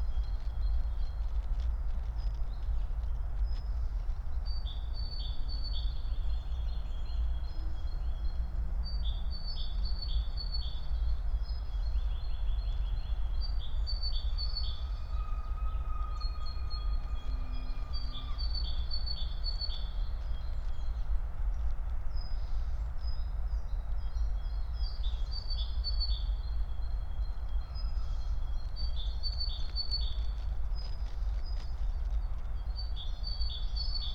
(remote microphone: AOM5024/ IQAudio/ RasPi Zero/ LTE modem)